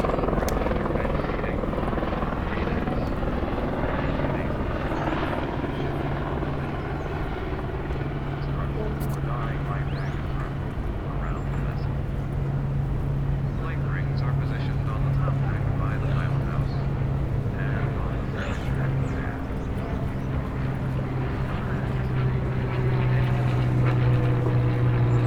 Battery Park, New York, NY, USA - Battery Park, Lower Manhattan
Battery Park, Lower Manhattan: sound of water, helicopters passing by and announcements from the tour boats.
Zoom H6